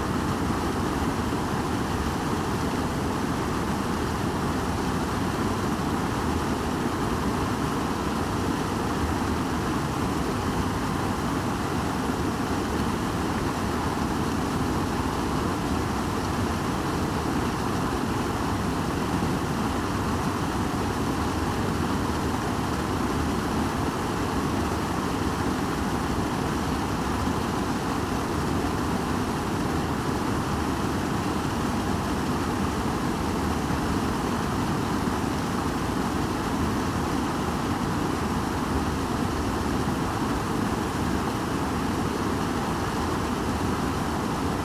Creek Koprivnica concrete man-made waterfall. Recorded with Zoom H2n (MS, on a tripod) from close, directly towards the waterfall.